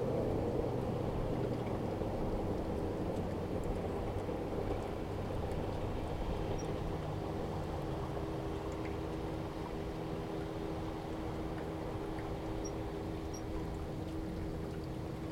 Boat Ramp, Council Bluff Lake, Missouri, USA - Boat Ramp
Boats approaching Council Bluff Lake Boat Ramp
Missouri, United States of America, 8 November 2020, 12:47